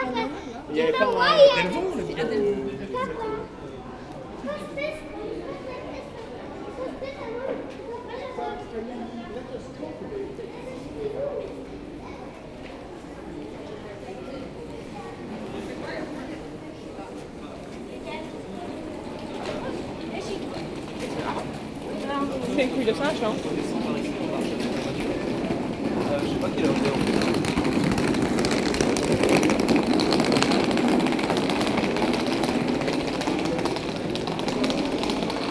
People walking on the street. In front of a Christmas store, two old persons find the statuettes very expensive. A family is walking, a lot of students going back home with their suitcase.